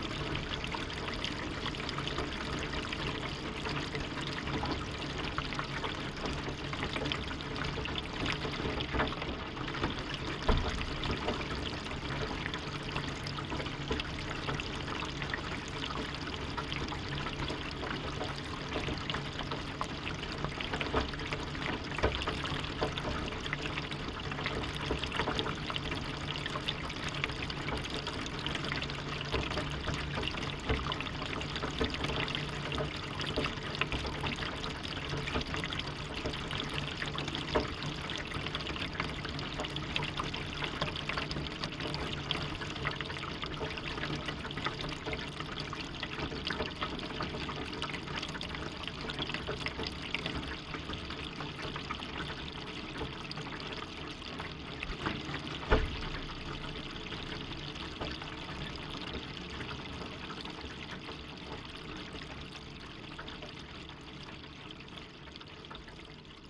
Šlavantai, Lithuania - Water gushing down the drainpipe
Dual contact microphone recording of a drainpipe during rain.